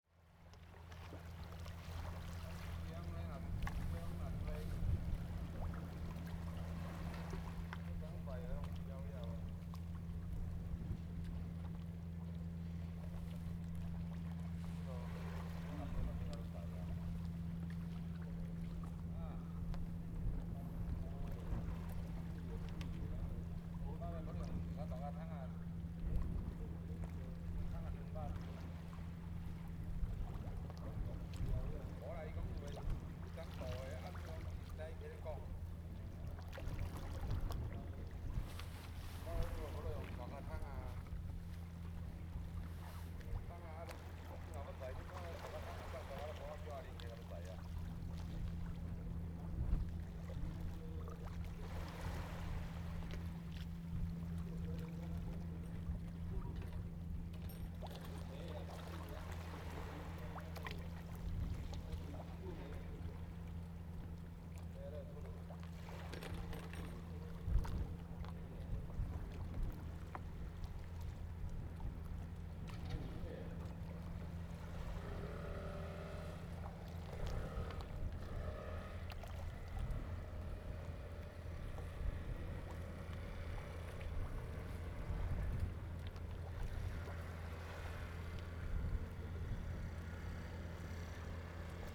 菓葉村, Huxi Township - Small fishing port
Small fishing port, In the dock, Tide
Zoom H2n MS+XY